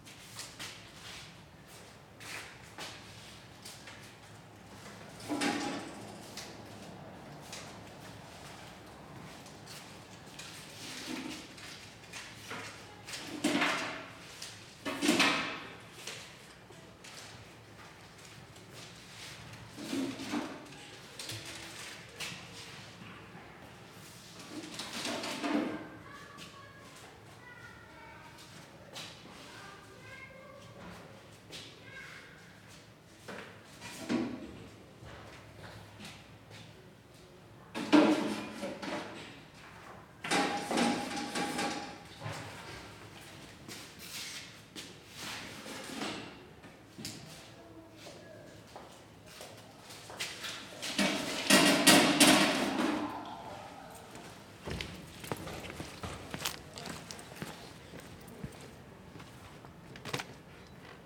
Zechliner Straße, Soldiner Kiez, Wedding, Berlin, Deutschland - Zechliner Straße, Berlin - Following the postman
Mit dem Briefträger unterwegs.